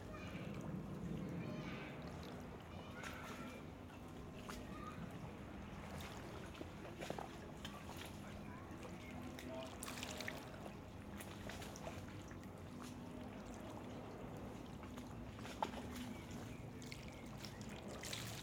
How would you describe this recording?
Kleine Wellen, Lienenflugzeug, Kinder, Eltern, Kirchengeläut.